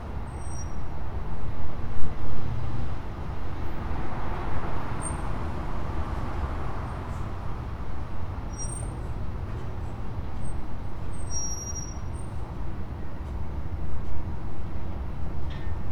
A recording of Smyrna Market Village as heard from under a gazebo. There are lots of traffic sounds around this area, but you can also hear some sounds coming from nearby shops.
Georgia, United States of America, 21 February 2020